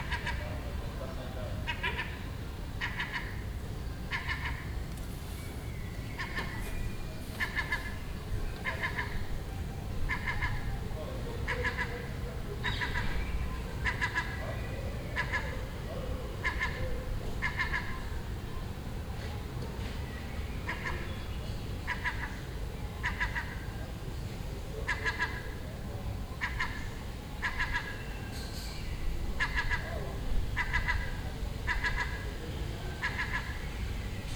Birds, thunder and bells The Hague. - Seagull Chatter
This seagull had a nest on the roof next door and it was making these sounds all day for a week.
Binaural recording.